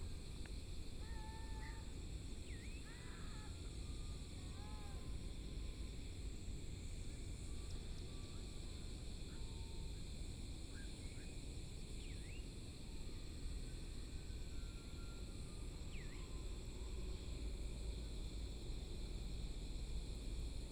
五福橋, 五結鄉利澤村 - Night of farmland
Night of farmland, Small village, Traffic Sound, Birdsong sound
Yilan County, Taiwan, 28 July 2014